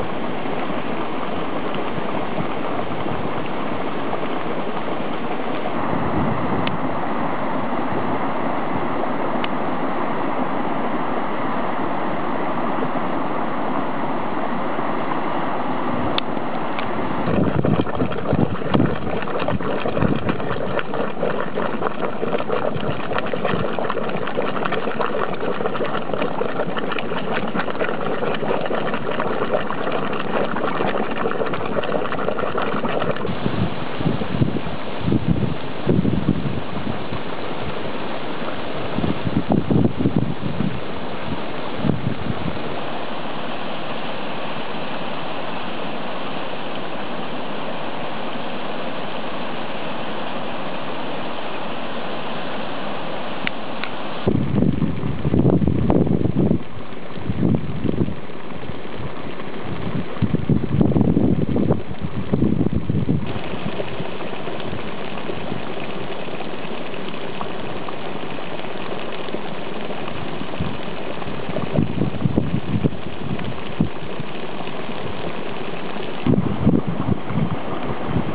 Reykjanesfólkvangur, Garðabær, Island - Seltun Geothermal Field
The sound of the geothermal field of Seltun. You hear steam coming from the earth and hot water bubbling.